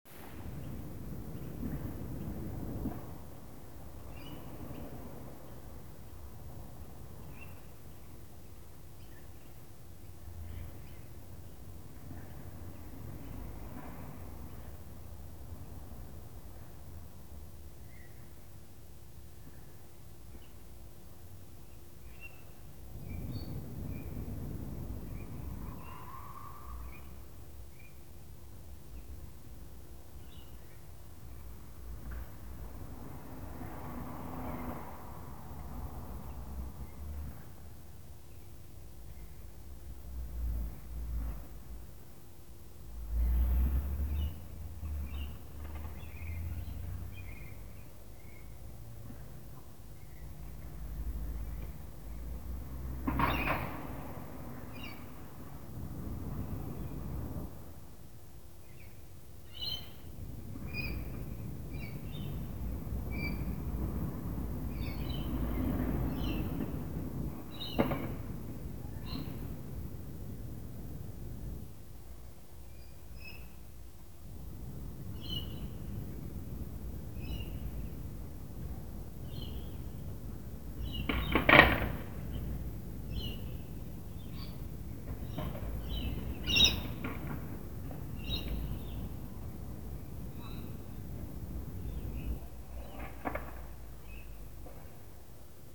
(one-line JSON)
{"title": "Hercules St, Dulwich Hill NSW, Australia - Skateboard Park", "date": "2017-09-22 13:15:00", "description": "Skateboarders, cars passing & a plane", "latitude": "-33.91", "longitude": "151.14", "altitude": "16", "timezone": "Australia/Sydney"}